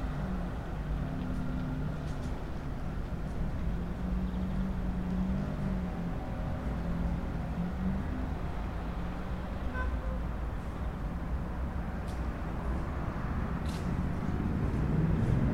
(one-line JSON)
{"title": "Trg Borisa Kidriča, Maribor, Slovenia - corners for one minute", "date": "2012-08-20 18:54:00", "description": "one minute for this corner: Trg Borisa Kidriča 6", "latitude": "46.56", "longitude": "15.66", "altitude": "275", "timezone": "Europe/Ljubljana"}